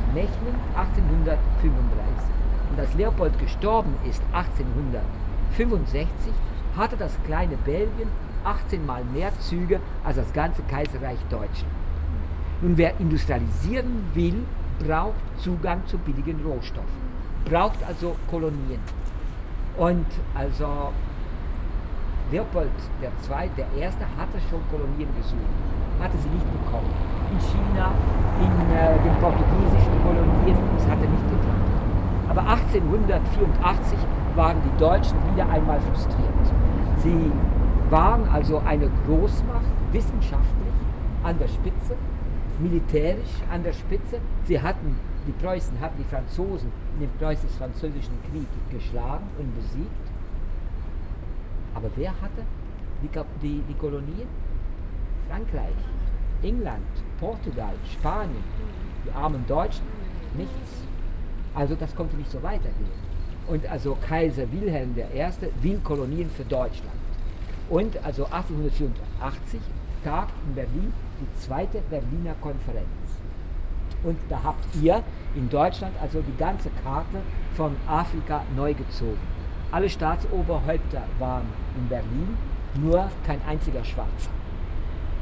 Excerpts from a nightly walk through Brussels with Stephaan; a bit of out-door tourism during a study trip on EU migration-/control policy with Iris and Nadine of v.f.h.
17 October 2017, ~20:00